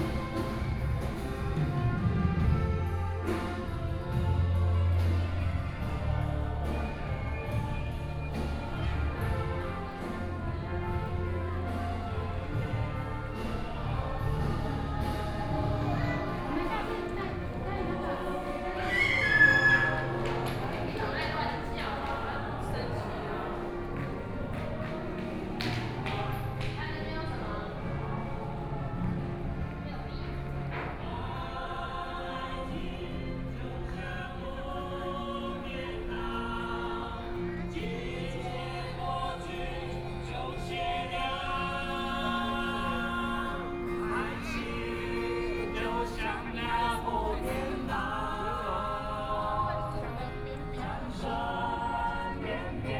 Various shops voices, Tourists, Winery transformed into exhibition and shops